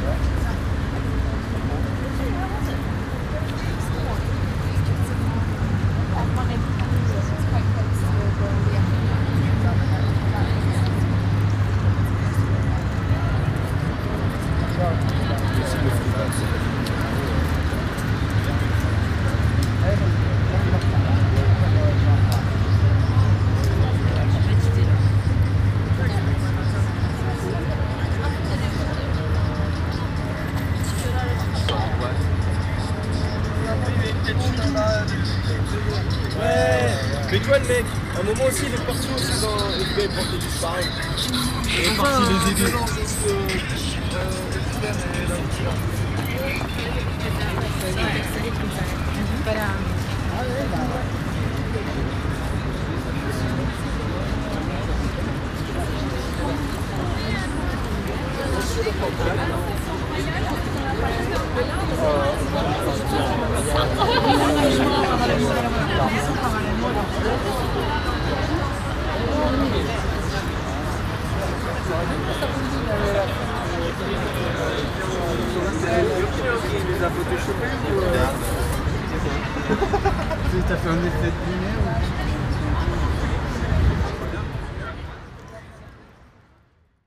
Leisure on Île saint Louis. People laughing, eating and drinking on Quai de Bourbon. Binaural recording.